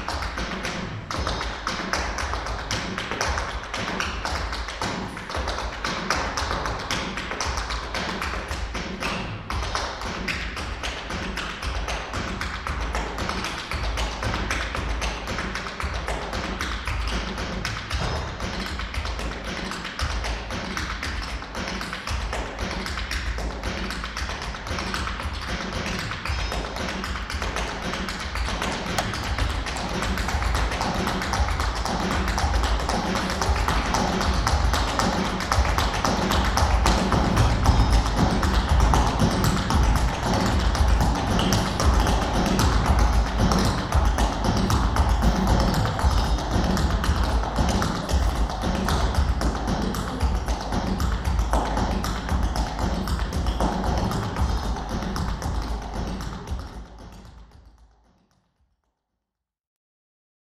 academy of performig art, stepdancer
stepdancer rehearsing in the classroom of HAMU
17 November, ~10:00